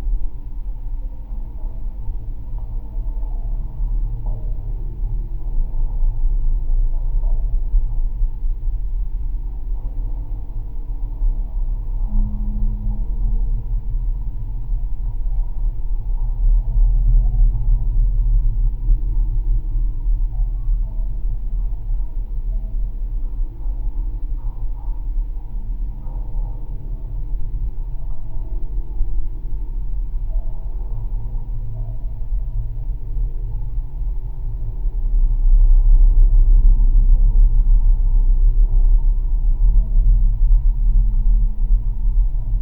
Ventspils, Latvia, pier fence
Geophone on pier fence. Very low frequancies.
Kurzeme, Latvija, 14 July